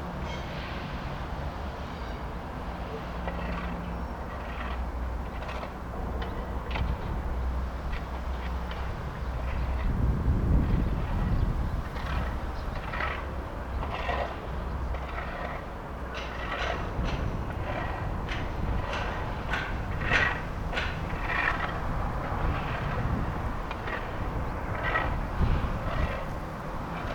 a construction site recorded form a distance of a few hundred meters. lots of different sounds.
Poznań, Poland, 20 September 2012